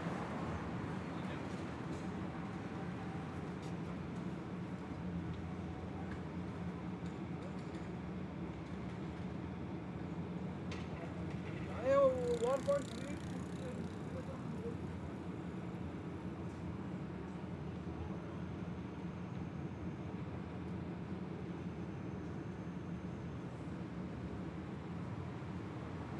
Myrtle Ave/Forest Av, Queens, NY, USA - Myrtle Avenue
Street ambience sounds recorded on Myrtle Ave/Forest Ave on a Sunday afternoon.
Sounds of people walking, carts, cars and music.
6 March 2022, 17:40